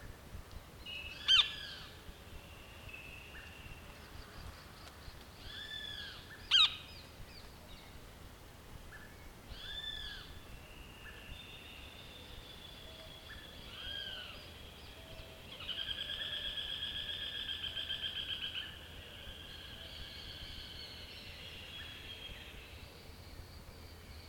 Awakening of the dawn, some birds, faraway foxes, mud birds
Argentina, 23 August 2021